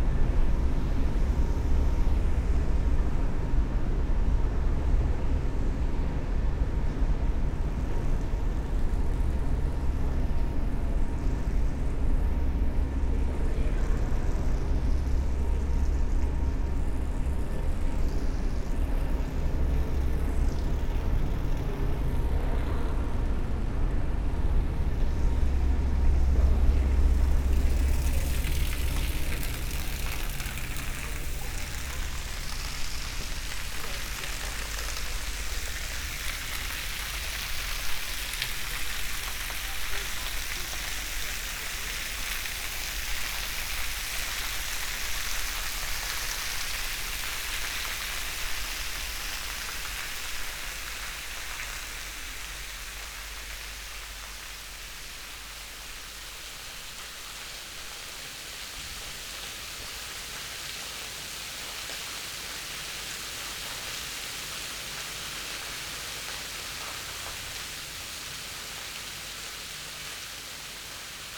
Yerevan, Arménie - Cascade complex
Cascade park is an enormous artistic complex, posed on a hill. Inside, there's a huge collection of escalators, going to the top and making drone sounds. Built during the Soviet era, Cascade is big, very big ! That's why there's so much reverb inside the tunnel.